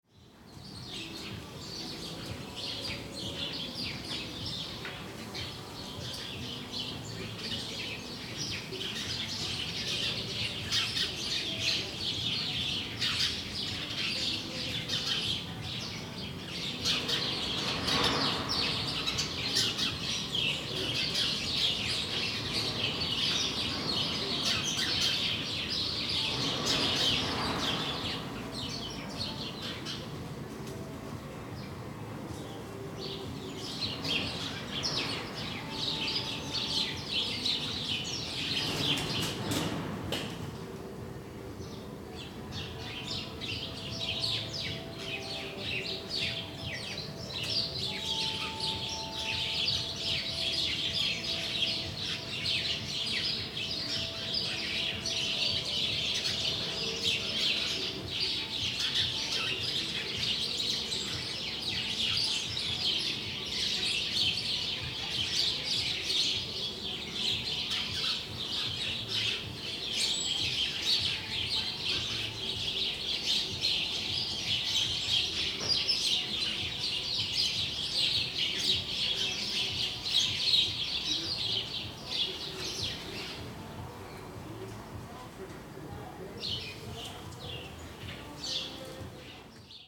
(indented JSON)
{
  "title": "Berlin Bürknerstr., backyard window - sparrows in front of my window",
  "date": "2008-09-10 12:00:00",
  "description": "10.09.2008 12:00\nall at once there were a bunch of clamorous sparrows in front of my window",
  "latitude": "52.49",
  "longitude": "13.42",
  "altitude": "45",
  "timezone": "Europe/Berlin"
}